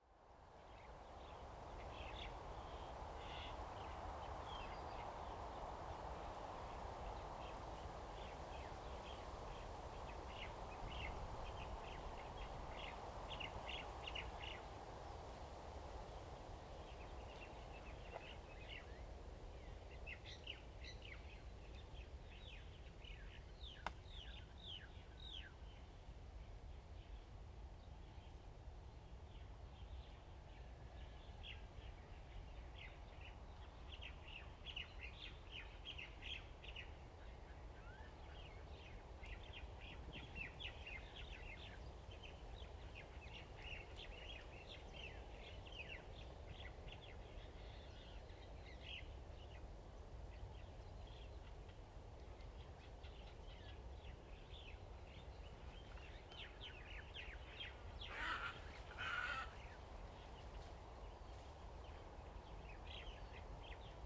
Lieyu Township, Kinmen County - Birds singing
Birds singing, Forest, Wind, Beside the lake
Zoom H2n MS +XY
福建省, Mainland - Taiwan Border, 4 November 2014